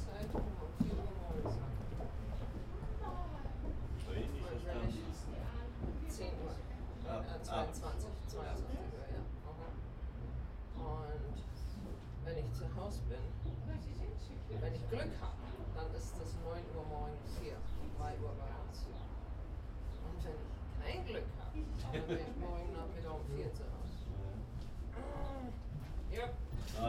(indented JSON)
{
  "title": "Kapellbrücke, Luzern, Schweiz - Kapellbrücke",
  "date": "1998-08-08 12:56:00",
  "description": "Schritte, Holz, Stimmen\nAugust 1998",
  "latitude": "47.05",
  "longitude": "8.31",
  "altitude": "438",
  "timezone": "Europe/Zurich"
}